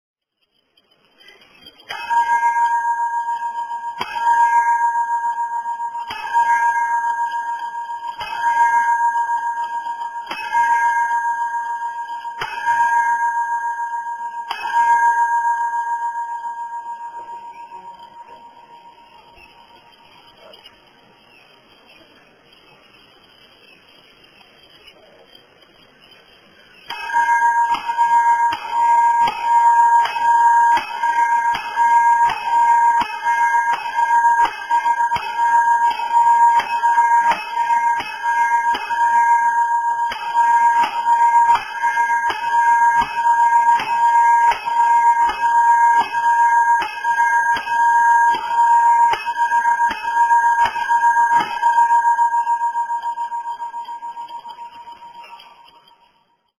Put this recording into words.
Campana della chiesetta di SantAntonio: rintocchi delle ore 07.00 e Angelus (Passo Cereda, Trento).